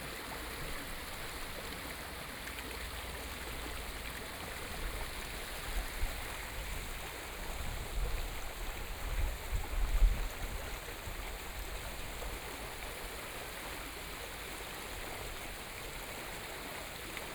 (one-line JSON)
{"title": "Guanxi Township, Hsinchu County - The sound of water", "date": "2013-12-22 13:28:00", "description": "The sound of water, Binaural recording, Zoom H6+ Soundman OKM II", "latitude": "24.79", "longitude": "121.18", "altitude": "131", "timezone": "Asia/Taipei"}